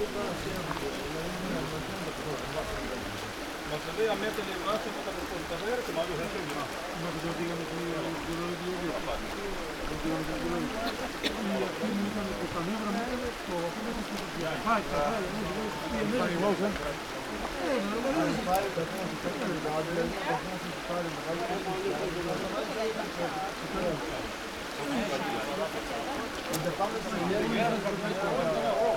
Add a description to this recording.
hikers resting by a mountain stream